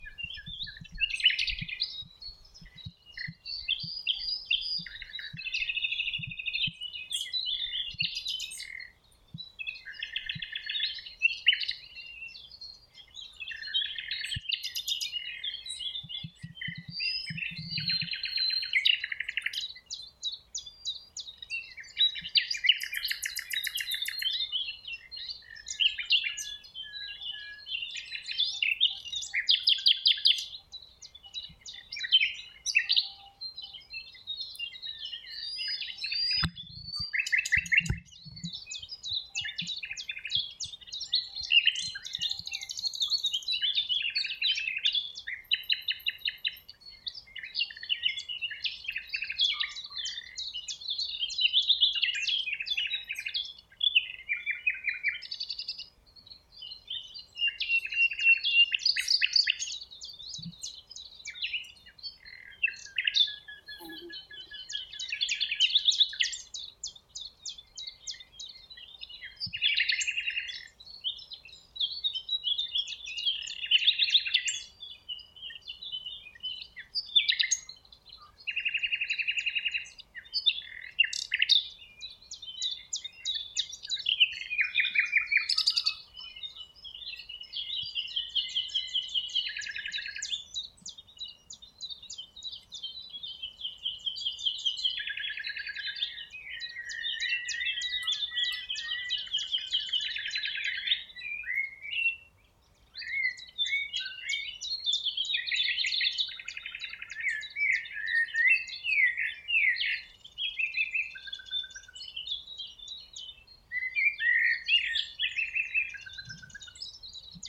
Coswig (Anhalt), Deutschland - Kliekener Aue - Vogelstimmen
Die Kliekener Aue ist ein Naturschutzgebiet in der Elbaue nahe Wittenberg - Seen, Feuchtgebiete, Wiesen, Erlenbruchwald. Man hört den Gesang einer Nachtigal und immer wieder den gleichmäßigen Ruf eines Zilpzalps.
Sachsen-Anhalt, Deutschland, 29 April 2022, 17:20